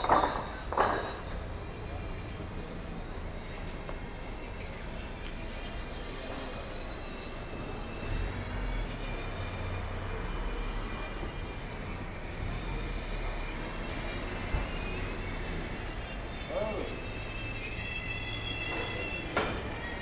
Ascolto il tuo cuore, città. I listen to your heart, city. Several chapters **SCROLL DOWN FOR ALL RECORDINGS** - Shopping in the time of COVID19
Wednesday March 11 2020. Following yesterday evening recording: walking in the square market at Piazza Madama Cristina, district of San Salvario, Turin the morning after emergency disposition due to the epidemic of COVID19.
Start at 11:50 a.m., end at h. 12:15 p.m. duration of recording 25'1O''
The entire path is associated with a synchronized GPS track recorded in the (kml, gpx, kmz) files downloadable here: